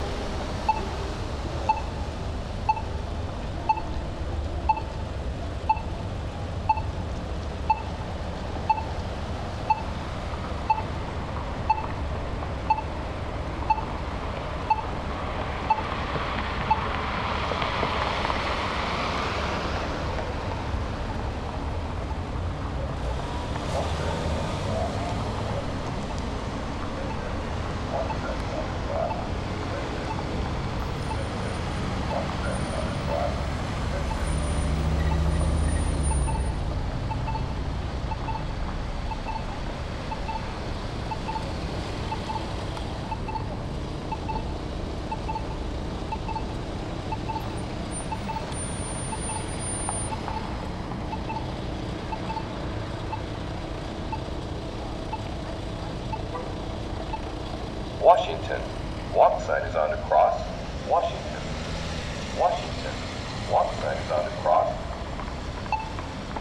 S Clinton St, Iowa City, IA, USA - Intersection
footsteps, traffic, traffic signals. recorded on H4N zoom recorder